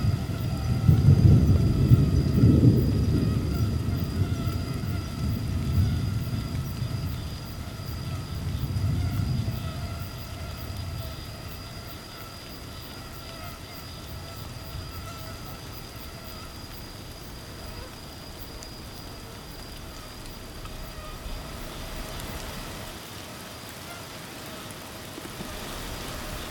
Tangará, Rio Acima - MG, 34300-000, Brasil - Mosquitoes and light rain with thunderstorms
Evening in the interior of Minas Gerais, Brazil.
Mosquitoes and light rain with thunderstorms.